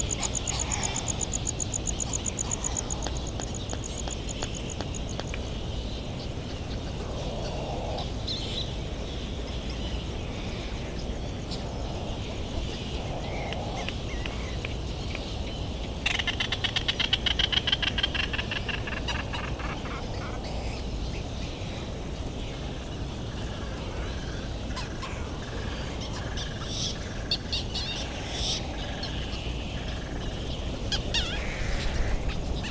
{
  "title": "United States Minor Outlying Islands - Bonin Petrel soundscape",
  "date": "2012-03-13 21:30:00",
  "description": "Recorded on the path to the All Hands Club ... Sand Island ... Midway Atoll ... recorded in the dark ... open lavalier mics ... flight calls and calls from bonin petrels ... calls and bill clapperings from laysan alabatross .. calls from white terns ... a cricket ticks away the seconds ... generators kick in and out in the background ...",
  "latitude": "28.22",
  "longitude": "-177.38",
  "altitude": "16",
  "timezone": "Pacific/Midway"
}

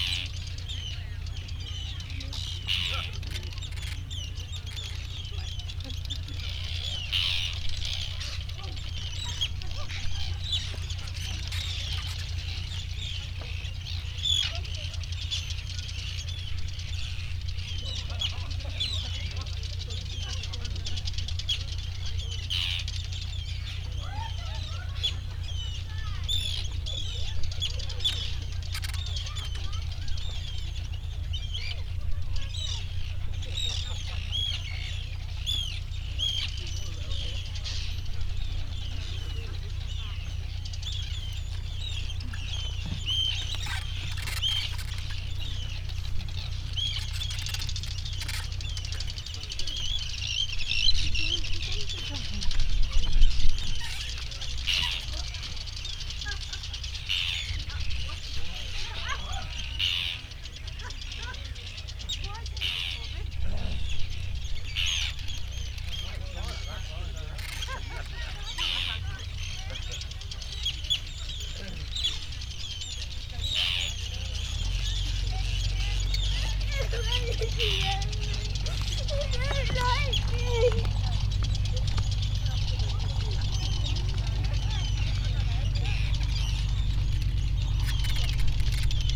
{
  "title": "North Sunderland, UK - arctic tern colony ...",
  "date": "2012-05-28 13:57:00",
  "description": "Inner Farne ... Farne Islands ... arctic tern colony ... they actively defend their nesting and air space ... and then some ... background noise from people ... planes ... boats and creaking boards ... warm dry sunny day ... parabolic ...",
  "latitude": "55.62",
  "longitude": "-1.66",
  "altitude": "9",
  "timezone": "Europe/London"
}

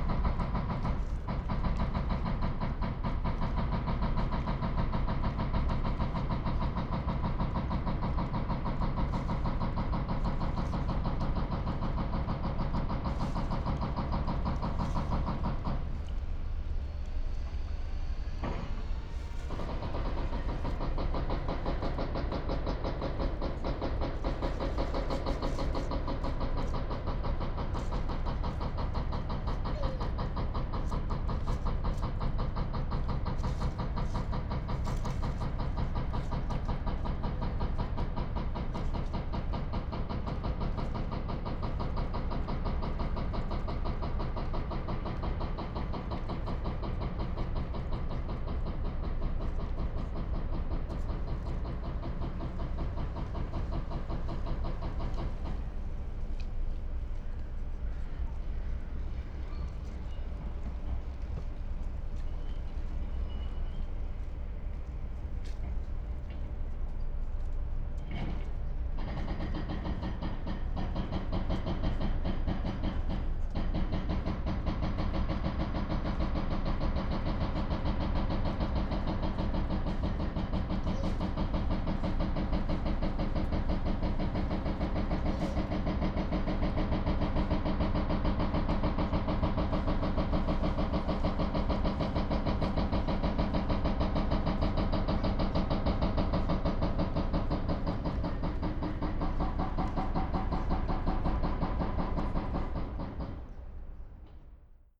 {
  "title": "Berlin, Plänterwald, Spree - river ambience, deconstruction work at the factory",
  "date": "2020-12-17 14:55:00",
  "description": "riverside Spree, helicopter and heavy deconstruction work is going on opposite at the cement factory, on a late autumn day\n(Sony PCM D50, AOM5024)",
  "latitude": "52.49",
  "longitude": "13.49",
  "altitude": "23",
  "timezone": "Europe/Berlin"
}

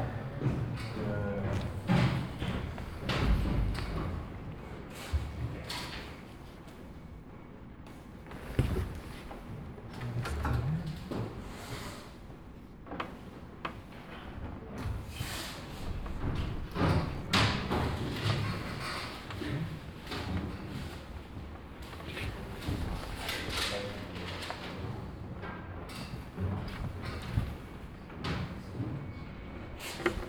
{
  "title": "Buntentor, Bremen, Deutschland - bremen, schwankhalle, theatre hall",
  "date": "2012-06-12 16:30:00",
  "description": "Inside the big stage theatre hall of the Schwankhalle. The sounds of a stage setup - metal pipes being moved and conversations of the stuff.\nsoundmap d - social ambiences and topographic field recordings",
  "latitude": "53.07",
  "longitude": "8.81",
  "altitude": "7",
  "timezone": "Europe/Berlin"
}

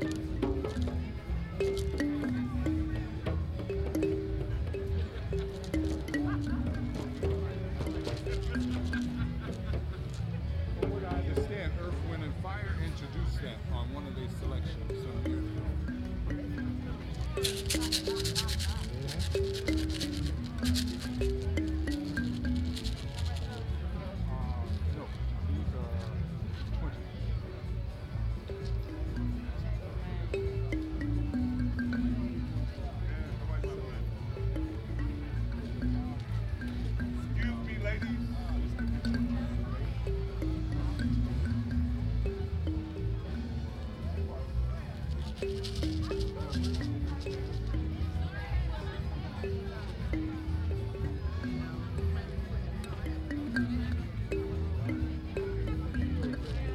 {
  "title": "Washington Park, S Dr Martin Luther King Jr Dr, Chicago, IL, USA - Bag of Thumb Pianos 1",
  "date": "2012-09-03 19:20:00",
  "description": "Trying out thumb pianos taken from a bag",
  "latitude": "41.80",
  "longitude": "-87.61",
  "altitude": "185",
  "timezone": "America/Chicago"
}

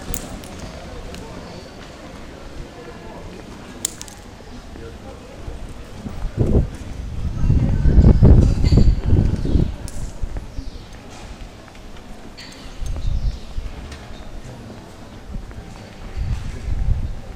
{
  "title": "Plaza de Cavana, Nerja - Walking through a flock of pigeons",
  "date": "2007-12-04 17:01:00",
  "description": "Walking through a flock of pigeons and around of the town square.",
  "latitude": "36.75",
  "longitude": "-3.88",
  "altitude": "27",
  "timezone": "Europe/Madrid"
}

